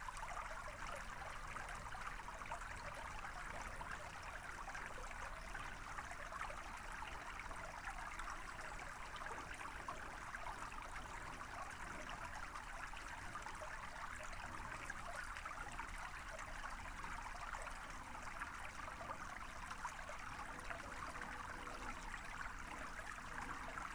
Recording of the River Dudwell on a cold January afternoon. With little rain recently the strength of the river was relatively light. Some parts of the river had iced up. Tascam DR-05 internal microphone, wind muff.